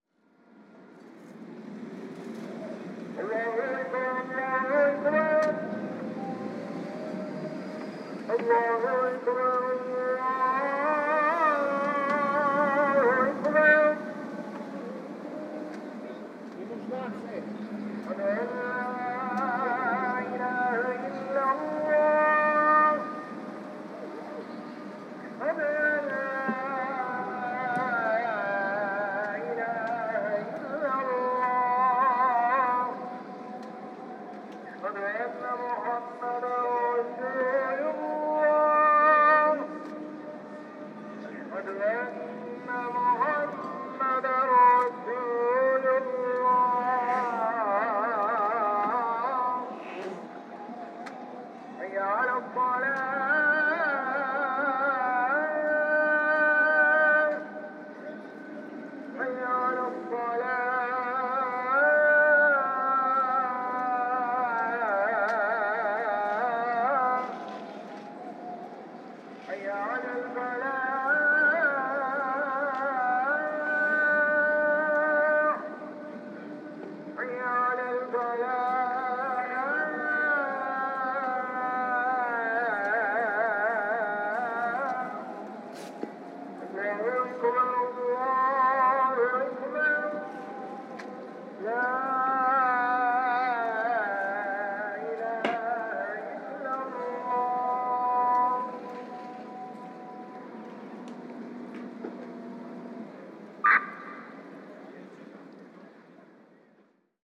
Marina Kalkan, Turkey - 915a Muezzin call to prayer (evening)
Recording of an evening call to prayer.
AB stereo recording (17cm) made with Sennheiser MKH 8020 on Sound Devices MixPre-6 II.
Akdeniz Bölgesi, Türkiye, 21 September 2022